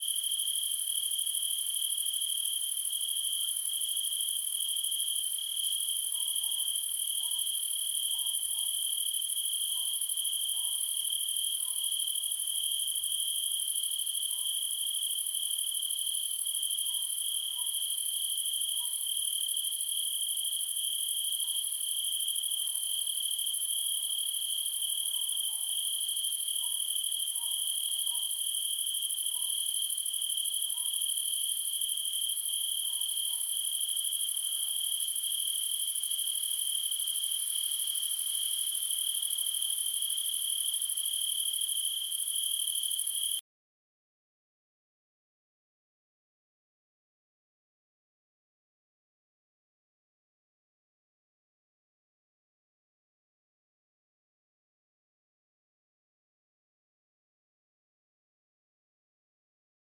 Marsannay-la-Côte, France - NATURE PRAIRIE Insects BG, Locusts, Crickets, Distant Dog Barking, France, LOOP
Marsannay-la-Côte, FRANCE
Combe de Pévenelle
REC: Sony PCM-D100 ORTF